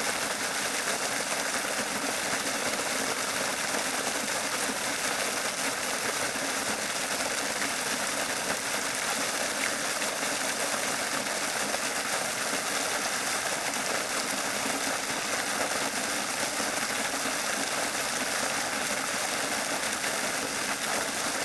Herserange, France - Rain symphony - IX - Fortissimo
This is a one hour sound of the rain onto the gigantic roofs of an abandoned factory. This warehouse is the Herserange wire drawing plant, located in Lorraine, France. It has been in a state of abandonment for 20 years. In 1965, Longwy area was the lifeblood of 26,000 steelmakers. Today, absolutely everything is dead. Areas are devastated, gloomy and morbid.
Fortunately, I had the opportunity to make a poetic visit, since I had the rare and precious opportunity to record the rain in all its forms. The gigantic hangar offers a very large subject, with many roof waterproofing defects.
I made two albums of this place : a one-hour continuity of rain sound (the concerto) and a one-hour compilation of various rain sounds (the symphony). Here is the sound of the symphony.
IX - Fortissimo